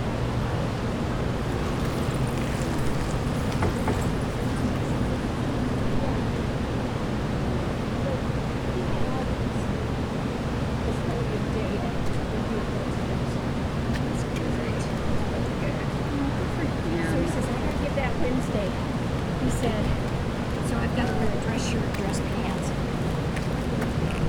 neoscenes: sitting by the bridge
CO, USA, 25 September